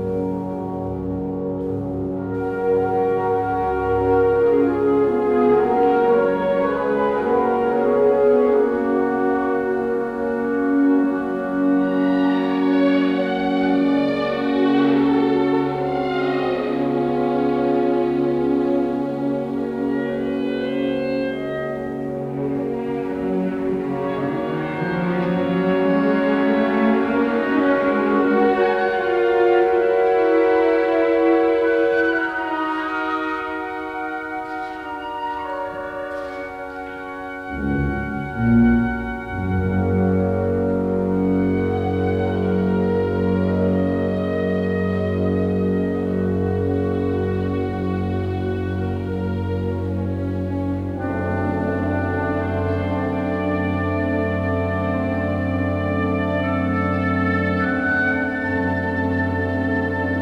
Südviertel, Essen, Deutschland - essen, philharmonie, alfred krupp concert hall, orchestra rehearsal
Im Alfred Krupp Saal der Philharmonie Essen. Der Klang einer Probe des Sinfonieorchesters Teil 1.
Inside the Alfred Krupp concert hall. The sound of a rehearsal of the symphonic orchestra.
Projekt - Stadtklang//: Hörorte - topographic field recordings and social ambiences